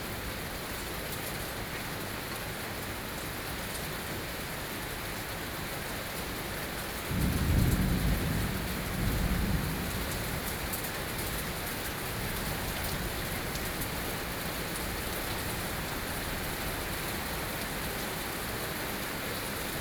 Beitou - Thunderstorm
Thunderstorm, Sony PCM D50 + Soundman OKM II
4 June 2013, 12:25